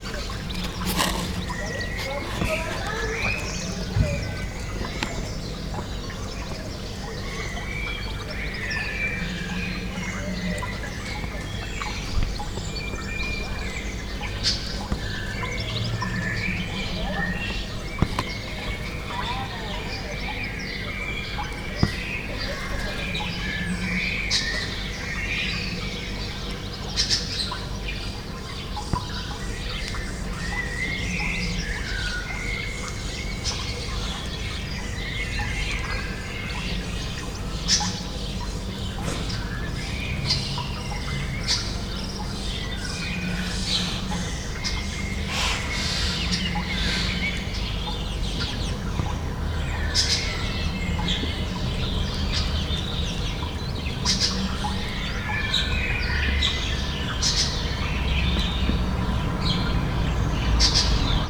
Piazza della Pace, Sassoleone BO, Italy - Sassoleone backyard ambience
Backyard ambience in a rainy day, recorded with a Sony PCM-M10
24 April 2019, 16:00